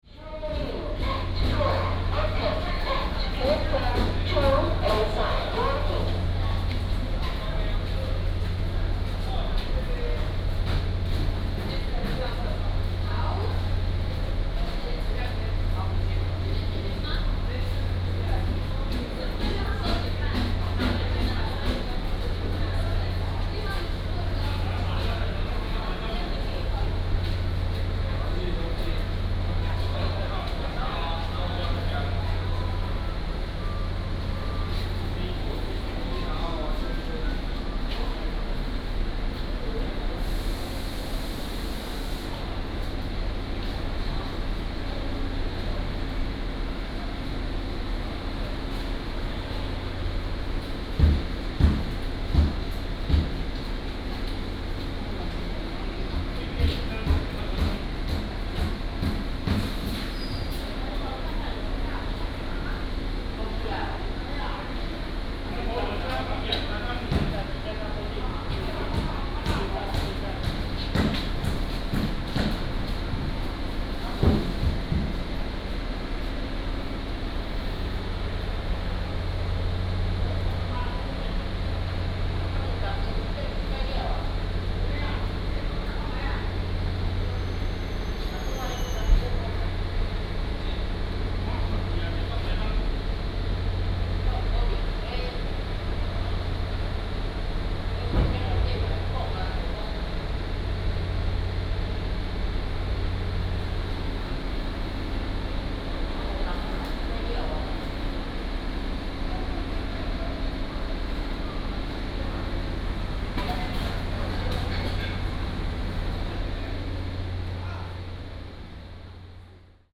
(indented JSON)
{
  "title": "Yuli Station, Yuli Township - In the station",
  "date": "2014-10-08 11:56:00",
  "description": "The station is being renovated",
  "latitude": "23.33",
  "longitude": "121.31",
  "altitude": "137",
  "timezone": "Asia/Taipei"
}